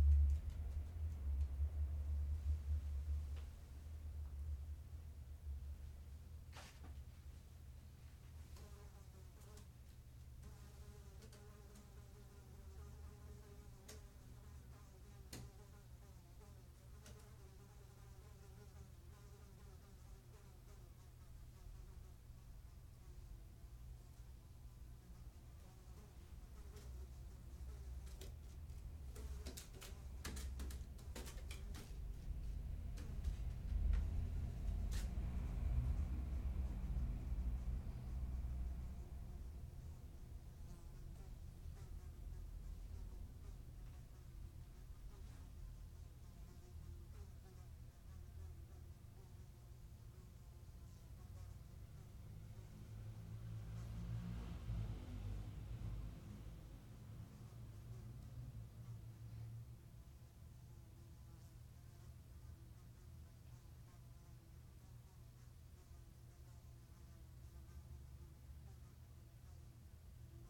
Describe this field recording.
mosquito voando de noite dentro de casa. Fly at home. Night.